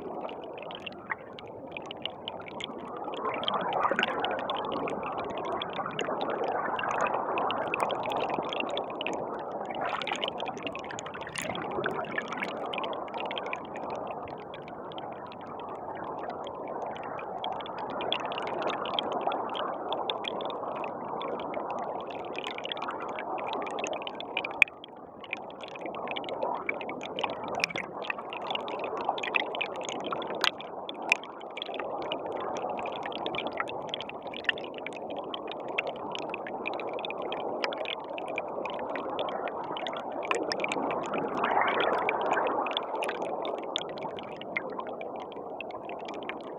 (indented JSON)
{
  "title": "nám. Dr. Václava Holého, Praha, Česko - Stream 02",
  "date": "2019-05-17 10:50:00",
  "description": "Hydrophone recording of the Rokytka river. The recording became a part of the sound installation \"Stream\" at the festival M3 - Art in Space in Prague, 2019",
  "latitude": "50.11",
  "longitude": "14.47",
  "altitude": "186",
  "timezone": "GMT+1"
}